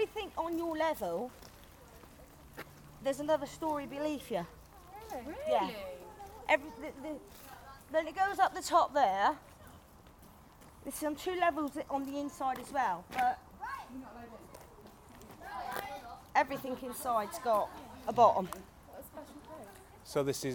Efford Walk One: Efford Fort - Efford Fort
Plymouth, UK, 9 October 2010, 17:54